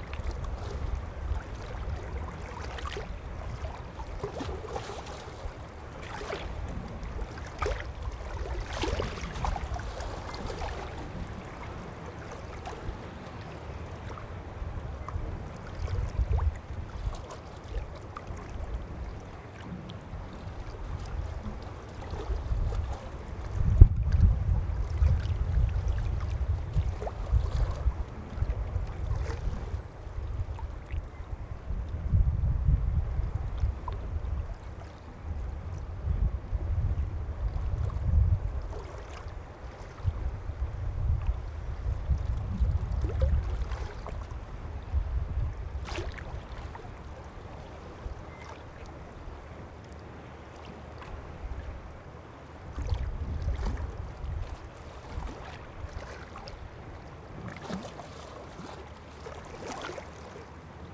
monheim, rhein bei hochwasser
frühjahr 07 nachmittags - rheinhochwasser ruhig und langsam ziehend, gluckern bei umspültem anlegestand - monoaufnahme direkt mikrophonie
soundmap nrw - sound in public spaces - in & outdoor nearfield recordings
klappertorstrasse, am rheinufer